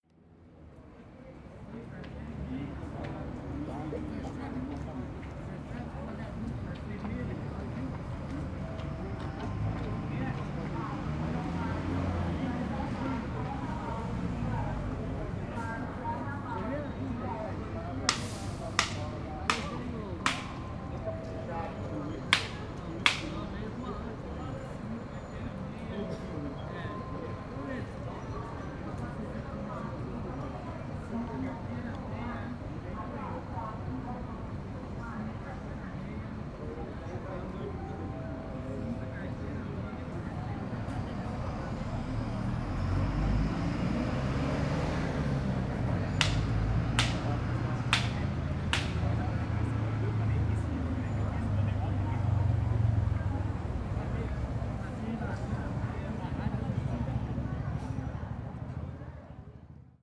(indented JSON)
{
  "title": "Calçadão de Londrina: Loja e vendedores informais - Loja e vendedores informais / Store and informal vendors",
  "date": "2017-05-29 14:12:00",
  "description": "Panorama sonoro: vendedor de cintos, meias e carteiras utilizando um dos cintos para produzir estralos como forma de chamar atenção. Ele se localizava, junto com outros vendedores informais, em frente à uma grande loja de departamentos em uma esquina nas proximidades da Praça Marechal Floriano Peixoto. Essa loja, com alto-falantes instalados em sua marquise, estendia ao Calçadão a emissão de todas as músicas e chamados emitidos no interior do estabelecimento.\nSound panorama:\nSeller of belts, socks and wallets using one of the belts to produce estrals as a way to draw attention. He was located, along with other casual vendors, in front of a large department store on a nearby corner of Marechal Floriano Peixoto Square. This store, with speakers installed in its marquee, extended to the Boardwalk the emission of all the songs and calls emitted inside the establishment.",
  "latitude": "-23.31",
  "longitude": "-51.16",
  "altitude": "615",
  "timezone": "America/Sao_Paulo"
}